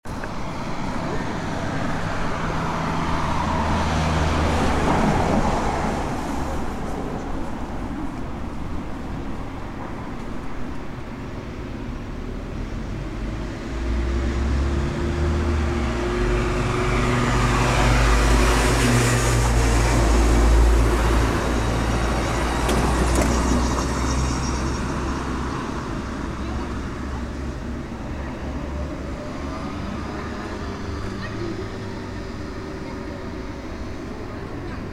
Tunnel, Zoetermeer
Traffic noise under tunnel